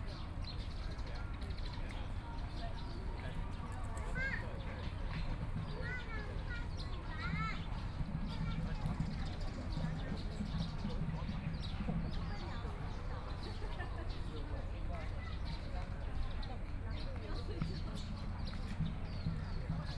中正紀念公園, Taipei City - in the Park
in the Park, birds sound
Binaural recordings, Sony PCM D100 + Soundman OKM II